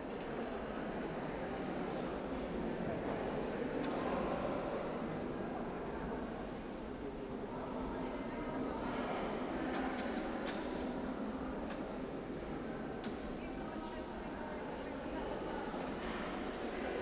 Lithuania, Utena, in the church after a concert
ambience of the modern church just after the choir music festival...